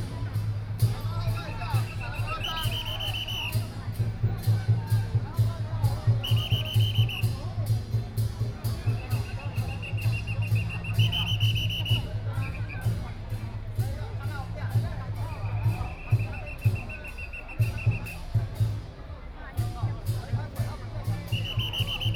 內湖區港富里, Taipei City - Traditional Festivals
Traditional Festivals, Distance came the sound of fireworks, Traffic Sound
Please turn up the volume a little. Binaural recordings, Sony PCM D100+ Soundman OKM II